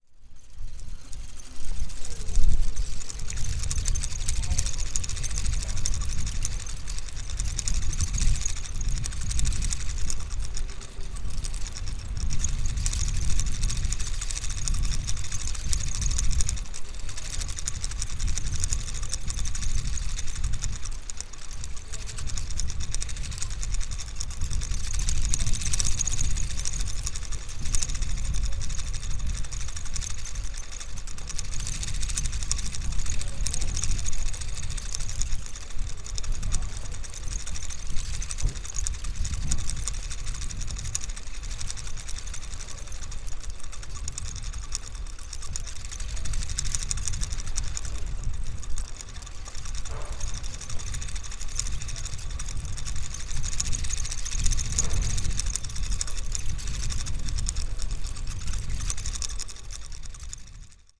spining sculptures on a display of a thrift shop in Sougia (sony d50)